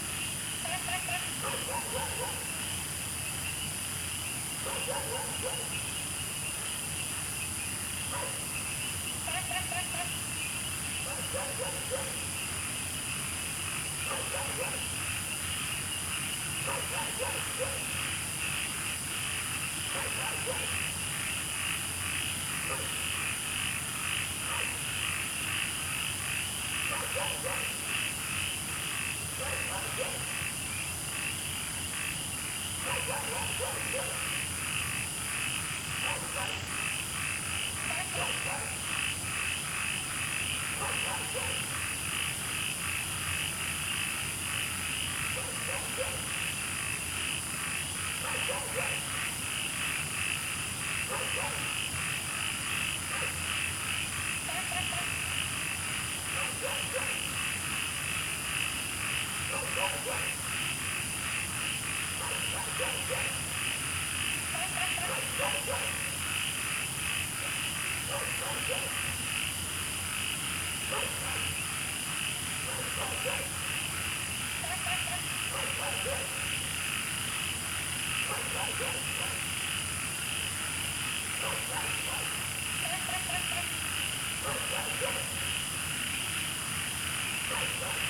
MaoPuKeng Wetland Park, Puli Township - Frogs chirping
Frogs chirping, Insects sounds, Wetland, Dogs barking
Zoom H2n MS+ XY